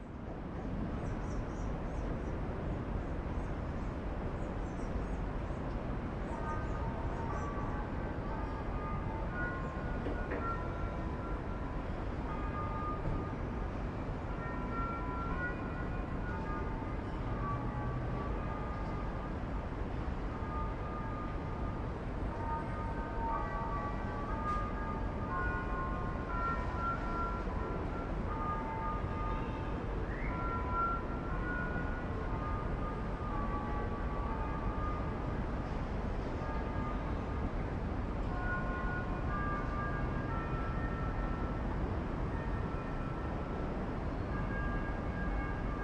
wind in cathedral tower, Torun Poland
wind in the cathedral tower
7 April 2011, 14:36